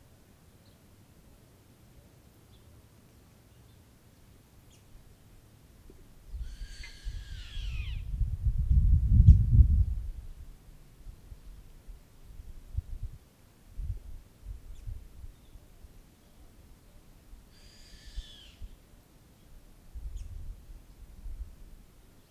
La Higuera, Región de Coquimbo, Chile - Choros town
Choros is a remote town in the north of Chile with desert coast climate. The groundwater and sea breeze makes possible to cultivate oolives, fruits plants and the existence of diverse fauna.
February 2017